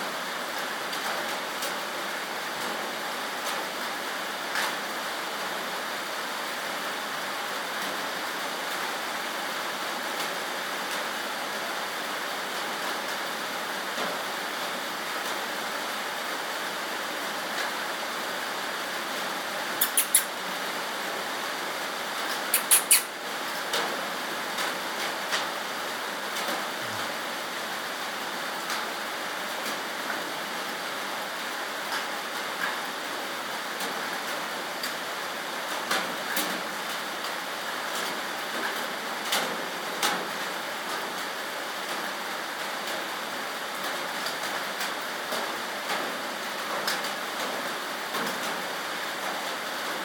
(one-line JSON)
{"title": "Claremont, CA, USA - Horse barn shelter during rainstorm Claremont foothills", "date": "2015-01-11 11:24:00", "description": "Horse barn shelter during rainstorm Claremont foothills. Recorded in mono with an iphone using the Røde app.", "latitude": "34.14", "longitude": "-117.72", "altitude": "471", "timezone": "America/Los_Angeles"}